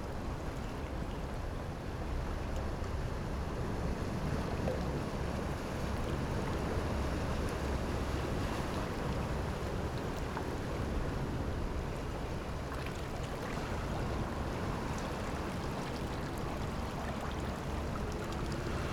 Rocks and waves, Very hot weather, Traffic Sound
Zoom H6+ Rode NT4